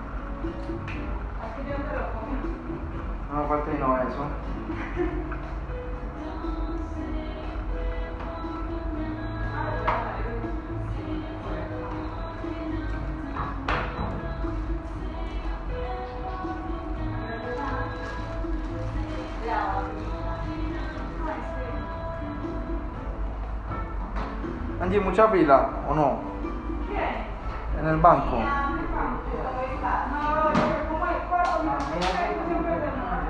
Cra., Medellín, Antioquia, Colombia - Cita odontológica
Descripción
Sonido tónico: Agua fluyendo, música de ambiente
Señal sonora: Utensilio dental, intervención odontólogo
Micrófono dinámico (Celular)
Altura 1 metro
Duración 3:11
Grabado por Daniel Zuluaga y Luis Miguel Henao